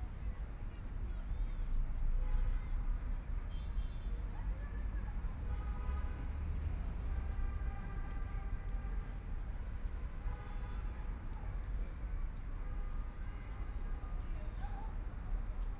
Hongkou District, Shanghai - Environmental sounds, Park
Traffic Sound, The sound traveling through the subway, Trafficking flute master, 're Playing flute sounds
Flute sounds, Zoom H6+ Soundman OKM II
Shanghai, China, 23 November